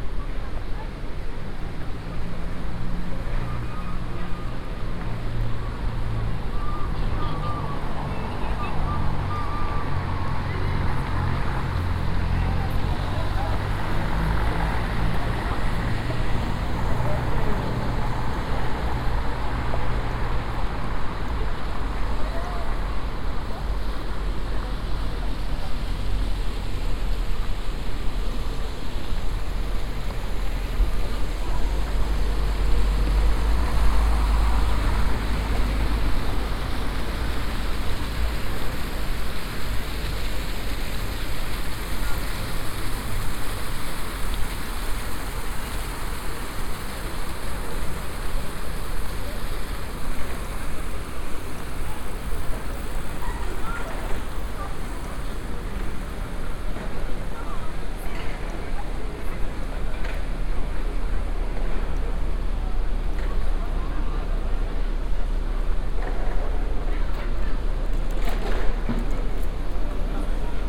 Place Charles de Gaulle, Lille, France - (406) Soundwalk around La GrandPlace in Lille
Binaural soundwalk around Place Charles de Gaulle (La Grand'Place) in Lille.
recorded with Soundman OKM + Sony D100
sound posted by Katarzyna Trzeciak
Hauts-de-France, France métropolitaine, France, 14 November 2018